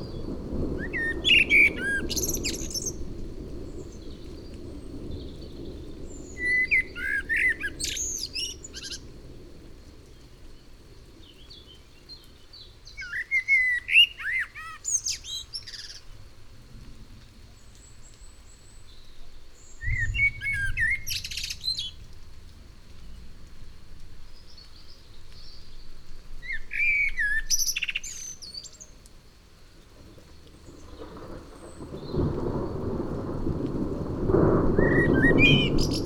Merle et orage, puis grillons.
Tascam DAP-1 Micro Télingua, Samplitude 5.1
Massignieu-de-Rives, France